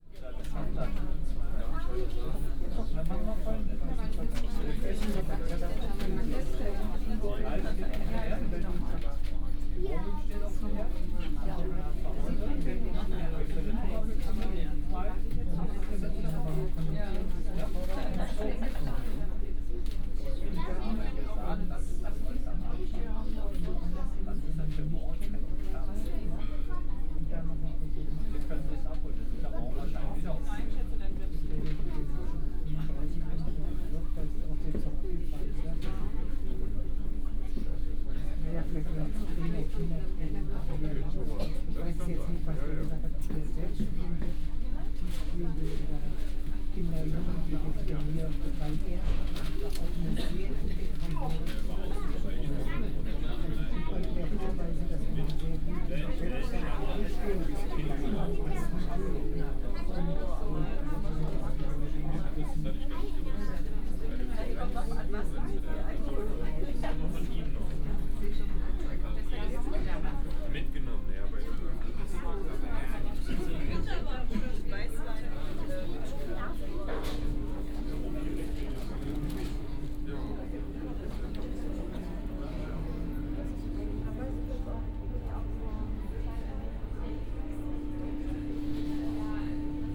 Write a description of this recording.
Berlin Wannsee, public transport ferry boat ambience, ferry departing, (Sony PCM D50, OKM2)